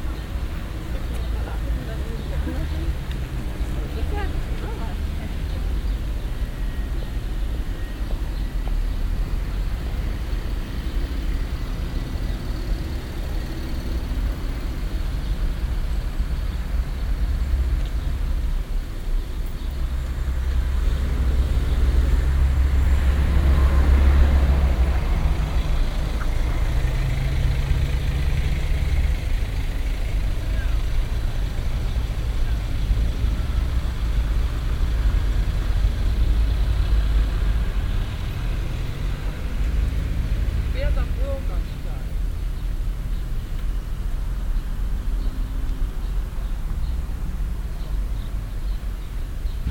refrath, siebenmorgen, altglascontainer
soundmap refrath/ nrw
ungeleerte altglascontainer an der strasse, mittags, passantenbeschwerden und neue flaschen
project: social ambiences/ listen to the people - in & outdoor nearfield recordings